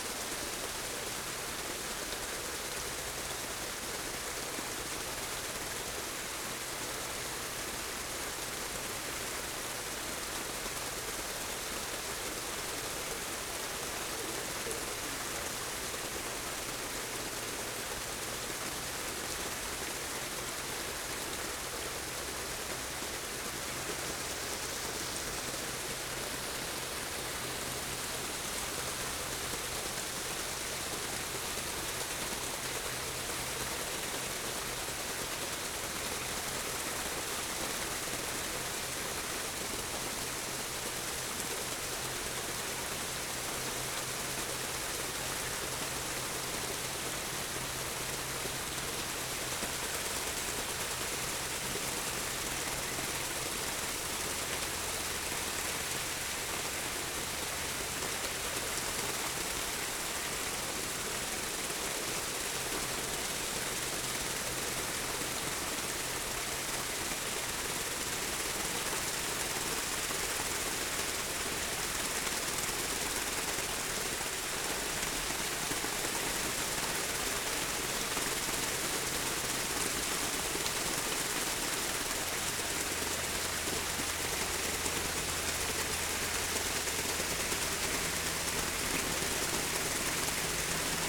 Alnwick, UK - Waterglass ... water sculpture ...
Alnwick gardens ... Waterglass by William Pye ... the installation produces a membrane of water around 330 degrees of a circle ..? the effect is like looking through a window ... the slightest breeze causes the effect to shimmer ... walked slowly to the centre ... lavalier mics clipped to baseball cap ...